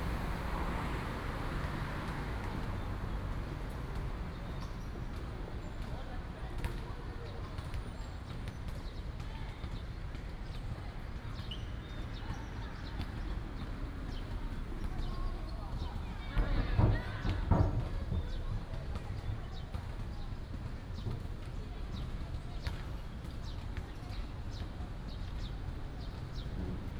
{"title": "Sec., Da’an Rd., Da’an Dist., Taipei City - Sitting next to school", "date": "2015-07-17 09:05:00", "description": "Sitting next to school, Basketball court, Traffic Sound", "latitude": "25.03", "longitude": "121.55", "altitude": "20", "timezone": "Asia/Taipei"}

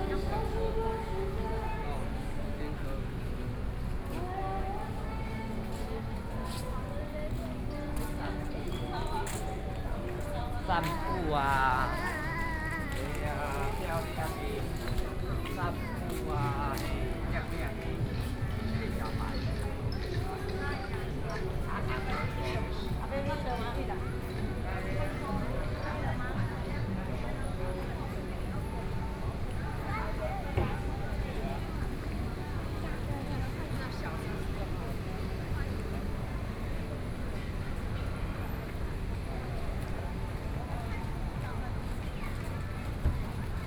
{"title": "主商里, Hualien City - walking in the Street", "date": "2014-08-28 20:14:00", "description": "walking in the Street, Various shops voices, Tourists, Traffic Sound, Transformation of the old railway into a shopping street", "latitude": "23.98", "longitude": "121.61", "altitude": "13", "timezone": "Asia/Taipei"}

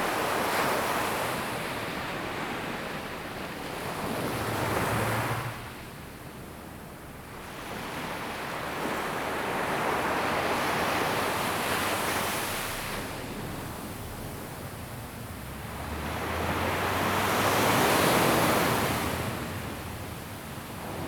{"title": "淺水灣, 三芝區後厝里, New Taipei City - At the beach", "date": "2016-04-15 07:28:00", "description": "birds sound, Sound of the waves\nZoom H2n MS+H6 XY", "latitude": "25.25", "longitude": "121.47", "altitude": "20", "timezone": "Asia/Taipei"}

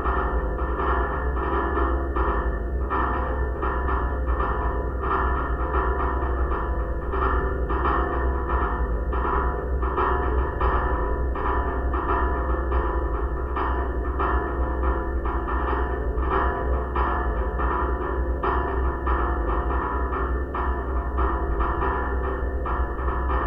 {"title": "Kupiskis, Lithuania, the tower music", "date": "2015-02-28 15:00:00", "description": "contact microphones placed on the base of the metallic tower working as one of supports for long metallic cable.", "latitude": "55.85", "longitude": "24.98", "altitude": "78", "timezone": "Europe/Vilnius"}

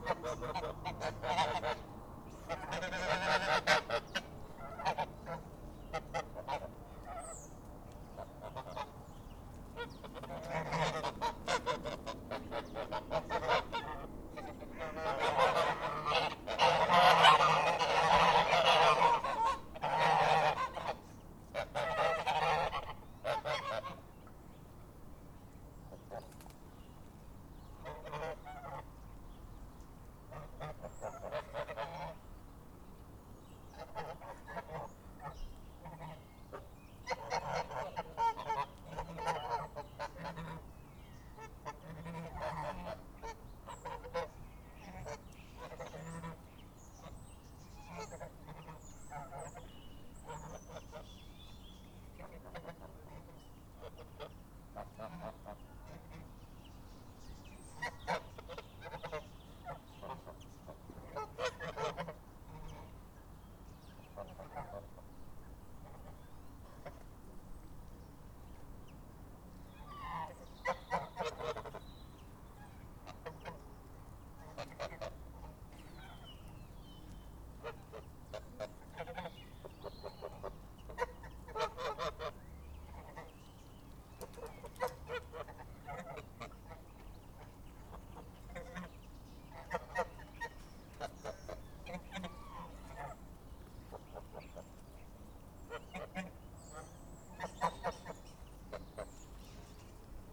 {"title": "Norton, Malton, UK - Xmas geese ...", "date": "2016-12-12 10:05:00", "description": "A gaggle of domestic geese in a backgarden ... how many days before they are gone ..? LS 11 integral mics ...", "latitude": "54.13", "longitude": "-0.78", "altitude": "23", "timezone": "GMT+1"}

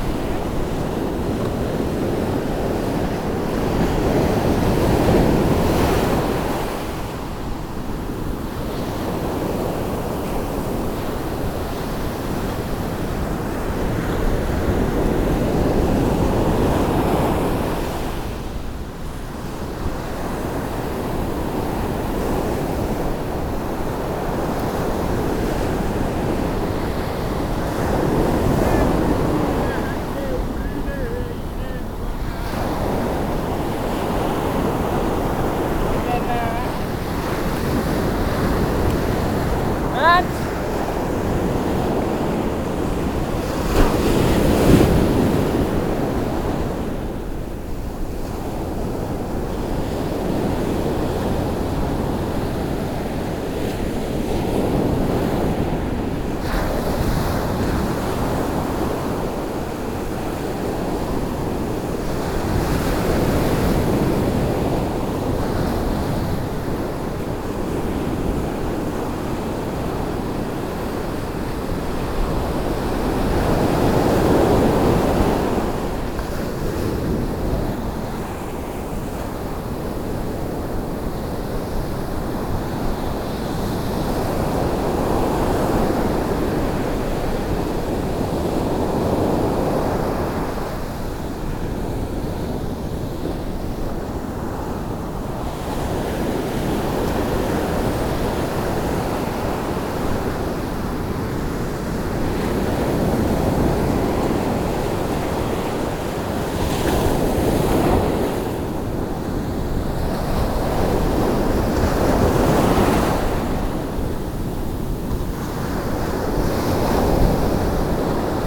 {"title": "Vineta, Swakopmund, Namibia - Sunset at Swakop...", "date": "2008-12-31 18:14:00", "description": "Sunset at the Swakop...\nrecordings are archived here:", "latitude": "-22.68", "longitude": "14.52", "altitude": "8", "timezone": "Africa/Windhoek"}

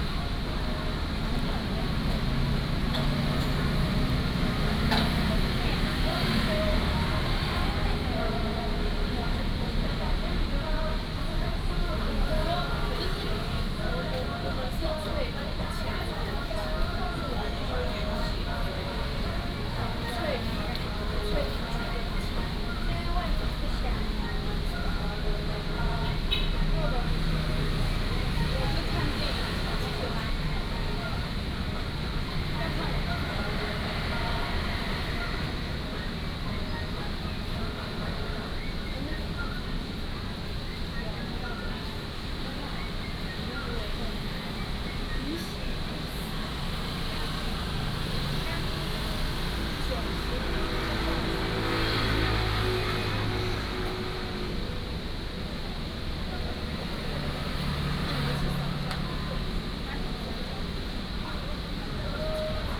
{"title": "Sec., Zhonghua Rd., Taitung City - Fried chicken shop", "date": "2014-09-08 19:59:00", "description": "In the road side shops, Traffic Sound, Fried chicken shop", "latitude": "22.75", "longitude": "121.15", "altitude": "18", "timezone": "Asia/Taipei"}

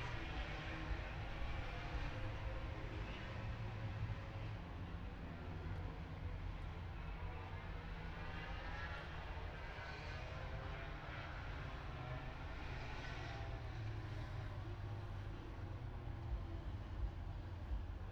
{"title": "Towcester, UK - british motorcycle grand prix 2022 ... moto grand prix ...", "date": "2022-08-05 09:49:00", "description": "british motorcycle grand prix 2022 ... moto grand prix first practice ... dpa 4060s on t bar on tripod to zoom f6 ...", "latitude": "52.07", "longitude": "-1.01", "altitude": "157", "timezone": "Europe/London"}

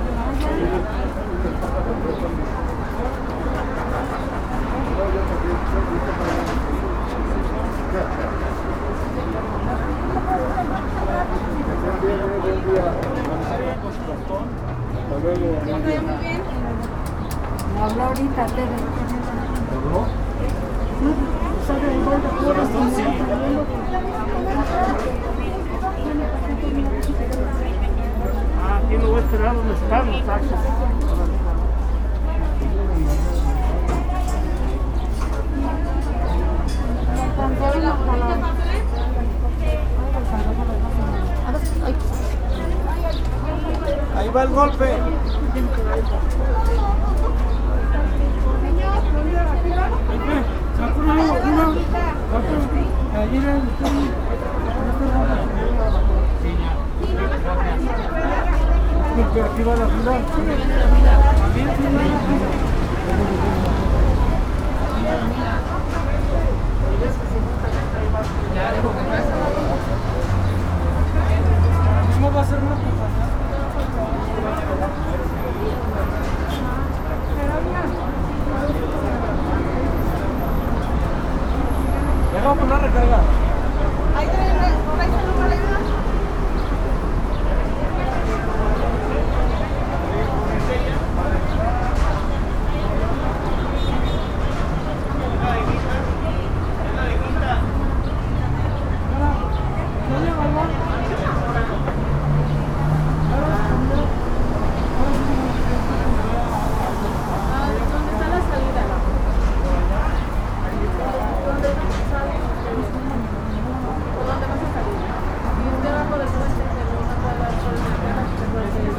{"title": "IMSS, Blvd A. López Mateos, Obregon, León, Gto., Mexico - Fila para aplicación de la segunda dosis de vacuna contra COVID-19 para adultos mayores de 60 años en el Seguro Social IMSS T1.", "date": "2021-05-31 12:13:00", "description": "The line to get the second dose vaccine to COVID-19 for people over 60 years old at Social Security IMSS T1.\nI made this recording on May 31st, 2021, at 12:13 p.m.\nI used a Tascam DR-05X with its built-in microphones and a Tascam WS-11 windshield.\nOriginal Recording:\nType: Stereo\nEsta grabación la hice el 31 de mayo de 2021 a las 12:13 horas.", "latitude": "21.14", "longitude": "-101.69", "altitude": "1816", "timezone": "America/Mexico_City"}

